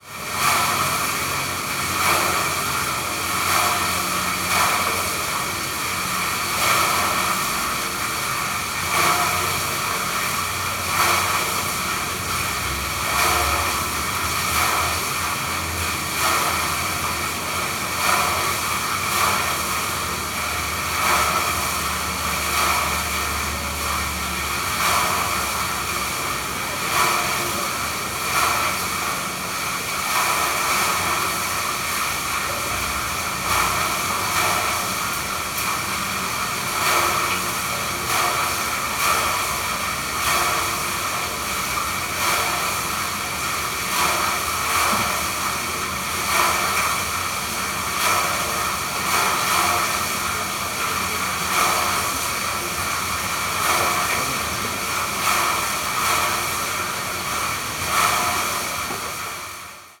Porto, corner of Rua Cabo Simao - into the drain
i put the microphone into a crack on a drain hatch. power slashes of a strong current in a small space.
1 October 2013, ~17:00